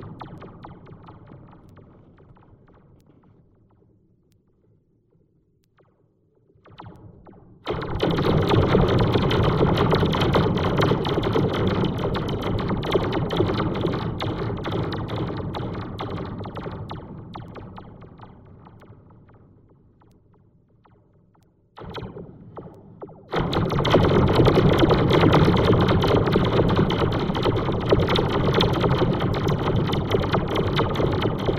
Florac, France - Spring fence
A spring fence, recorded with contact microphones. Using this fence makes some strange noises.
29 April, 13:10